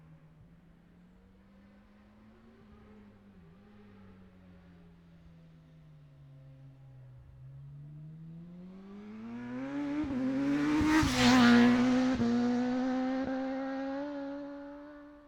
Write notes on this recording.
750cc+ practice ... Ian Watson Spring Cup ... Olivers Mount ... Scarborough ... binaural dummy head ... grey breezy day ...